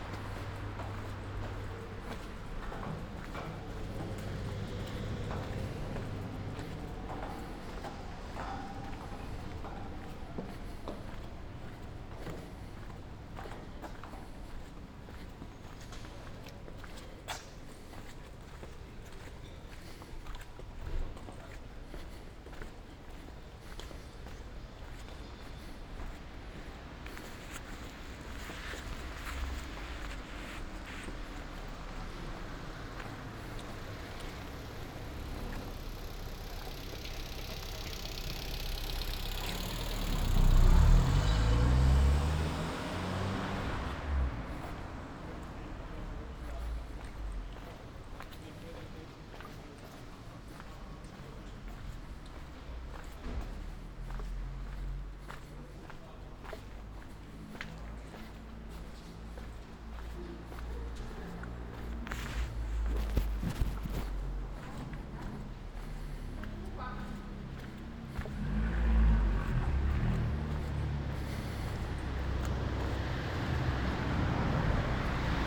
"Round Midnight Ferragosto walk back home in the time of COVID19": soundwalk.
Chapter CLXXX of Ascolto il tuo cuore, città. I listen to your heart, city
Friday, August 14th, 2021. More than one year and five months after emergency disposition due to the epidemic of COVID19.
Start at 11:46 p.m. end at 00:45 a.m. duration of recording 48’55”
As binaural recording is suggested headphones listening.
The entire path is associated with a synchronized GPS track recorded in the (kmz, kml, gpx) files downloadable here:
This path is the same as the second path of one year before, August 14th, 2020: